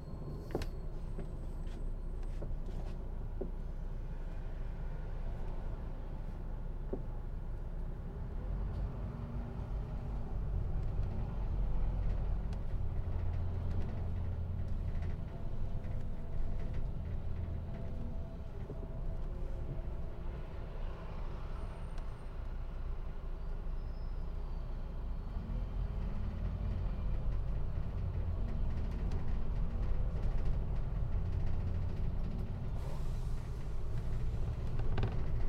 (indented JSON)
{
  "title": "on e2 bus from Brentford station, London - on e2 bus from Brentford station",
  "date": "2013-03-27 20:32:00",
  "latitude": "51.50",
  "longitude": "-0.32",
  "altitude": "24",
  "timezone": "Europe/London"
}